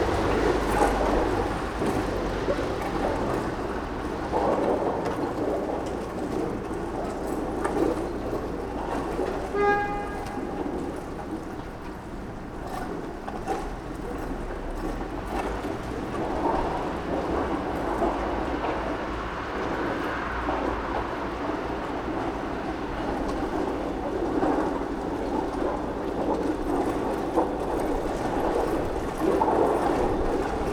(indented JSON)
{
  "title": "Décoration de Noël",
  "date": "2009-12-24 23:59:00",
  "description": "Orléans\nLe vent sengouffre dans les décorations de Noël : lamelles de plexiglass",
  "latitude": "47.90",
  "longitude": "1.90",
  "altitude": "114",
  "timezone": "Europe/Paris"
}